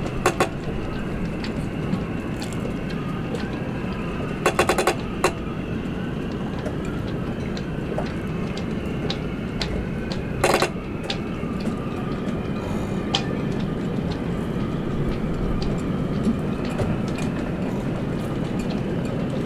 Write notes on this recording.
Heavy wind and sounds from sailboats mast. Recorded with rode NT-SF1 Ambisonic Microphone. Øivind Weingaarde